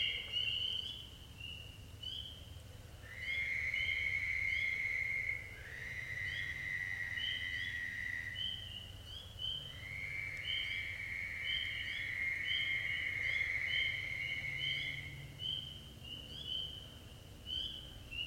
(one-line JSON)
{"title": "Downe, NJ, USA - toad road", "date": "2017-04-12 21:00:00", "description": "roadside recording featuring spring peepers and Fowler's toads", "latitude": "39.33", "longitude": "-75.08", "altitude": "22", "timezone": "America/New_York"}